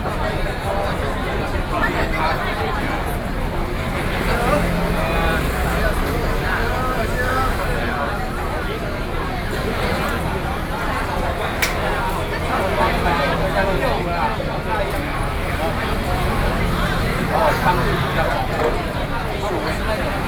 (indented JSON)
{
  "title": "萬大路, Taipei City - Roadside restaurant",
  "date": "2012-10-31 19:54:00",
  "latitude": "25.03",
  "longitude": "121.50",
  "altitude": "10",
  "timezone": "Asia/Taipei"
}